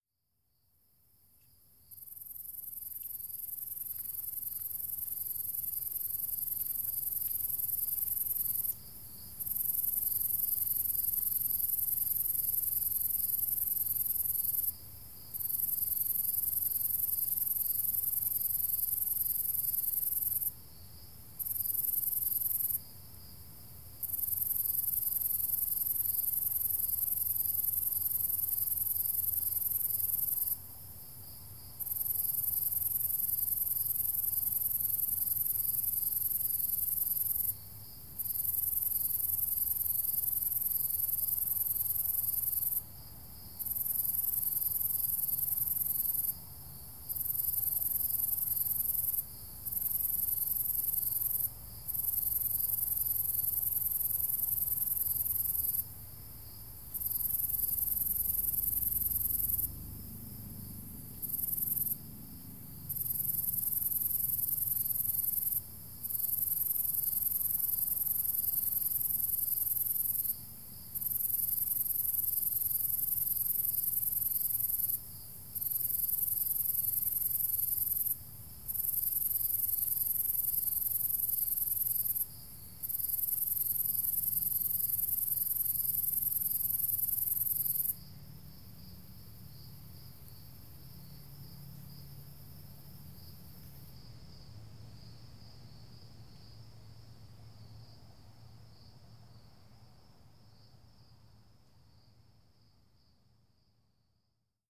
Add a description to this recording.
Recorded in Summer of 2014, this is what I believe to be some type of large cricket, but hopefully somebody can identify the sound for me? It was captured on a dark, desolate dirt road outside of Romeo, MI, at around 10:30 pm with calm conditions. I used a Tascam dr-07 and a tripod, leaving the mic aimed right at the creature, just past the ditch on the side of the road.